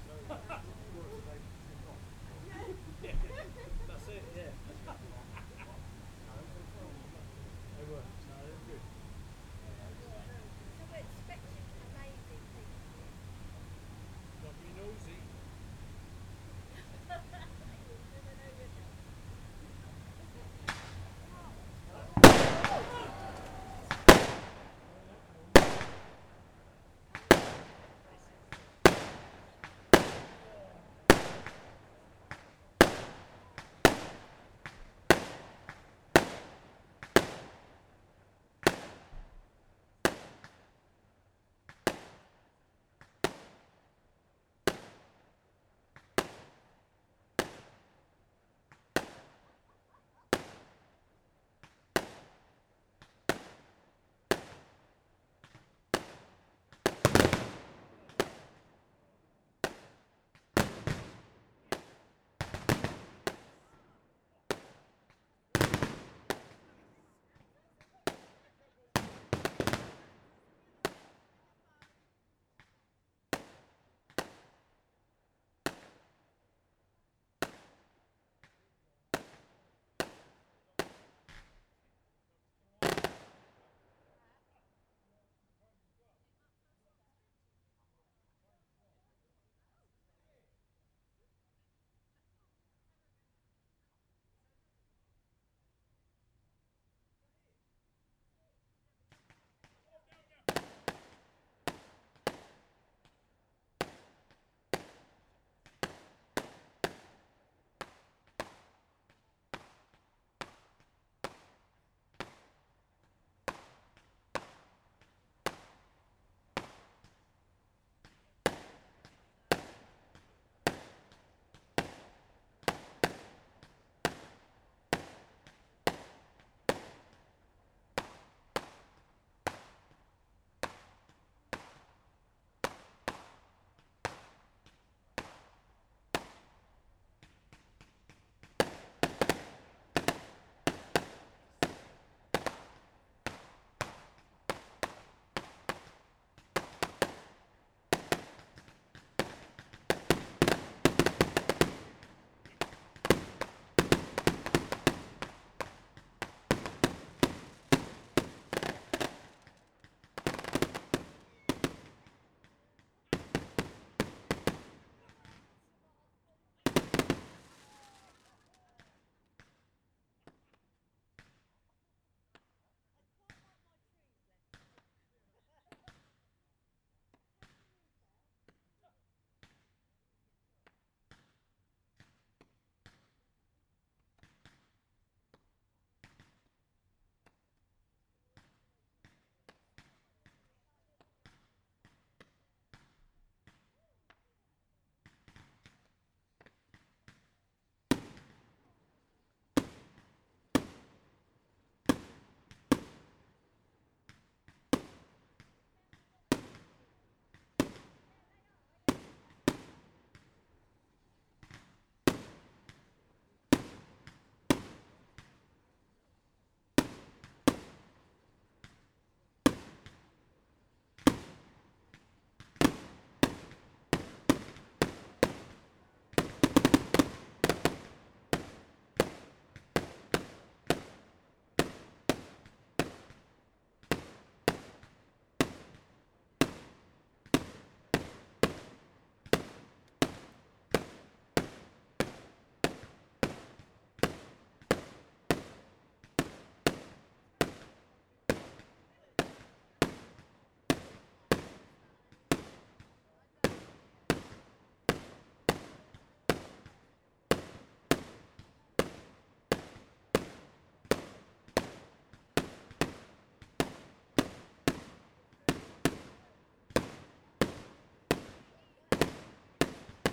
Reading, UK - from disco to firework display ...
from disco to firework display ... dpa 4060s clipped to bag to zoom h5 ... mill race in the background ... voices ... recorded at wedding celebration ...